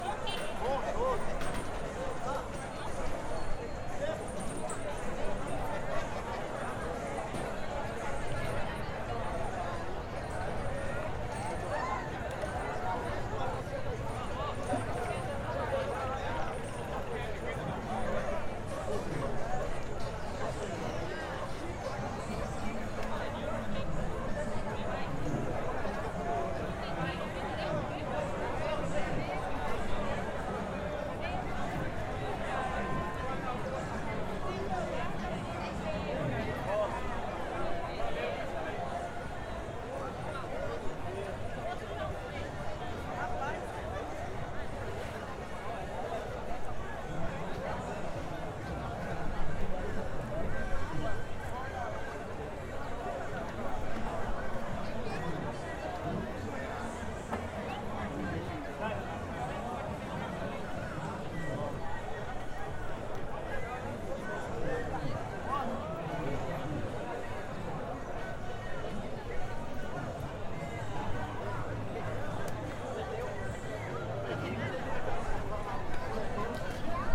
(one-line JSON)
{"title": "FINAL DA FEIRA LIVRE de Cruz das Almas, BA, Brasil - Final da Feira Livre de Cruz Das Almas- Frente ao Supermercado Central", "date": "2014-03-08 08:44:00", "description": "Captação feita com base da disciplina de Som da Docente Marina Mapurunga, professora da Universidade Federal do Recôncavo da Bahia, Campus Centro de Artes Humanidades e Letras. Curso Cinema & Audiovisual. CAPTAÇÃO FOI FEITA COM UM PCM DR 50, NO FIM DA FEIRA LIVRE MUNICIPAL EM CRUZ DAS ALMAS-BAHIA", "latitude": "-12.68", "longitude": "-39.10", "timezone": "America/Bahia"}